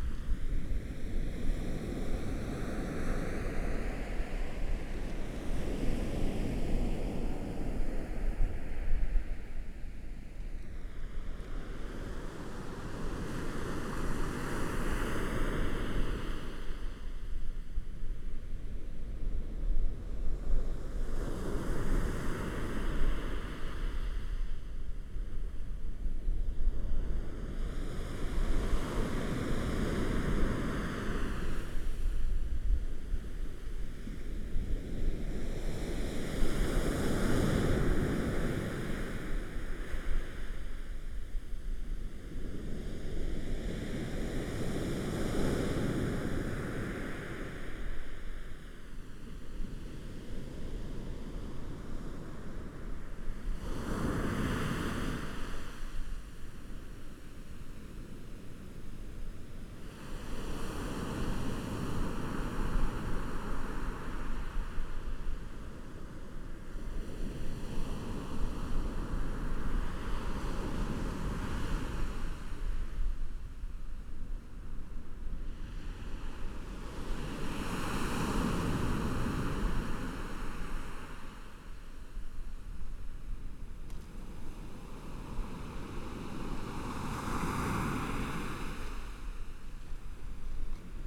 Sound of the waves, Binaural recordings, Zoom H4n+Rode NT4 + Soundman OKM II